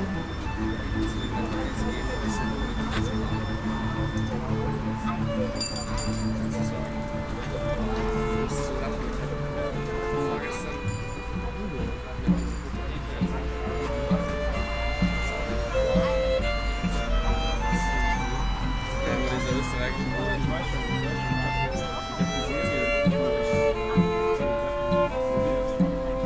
food stand, Columbiadamm, Berlin, Deutschland - ambience with musicians
noisy corner at Columbiadamm, Neukölln, Berlin, entrance to the Tempelhof airfield, newly opened korean quality fast food stand, musicians playing, pedestrians, bikes and cars on a busy Sunday afternoon.
(Sony PCM D50, DPA4060)
Berlin, Germany, 11 August 2013